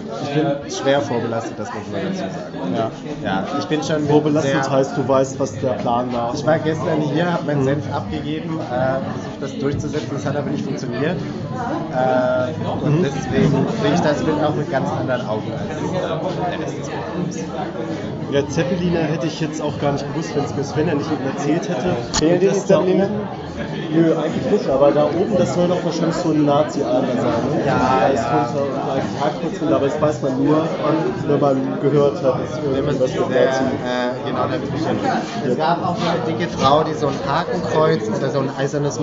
Ein Bild macht durch, Der Kanal, Weisestr. - Ein Bild macht reden, Der Kanal, Weisestr. 59
Samstag Abend. Nach 24 Stunden hängt das Triptychon. Es dringt von der Wand durch das Schaufenster auf die Straße. Die Gäste kommen. Das Bild macht reden.
Deutschland, European Union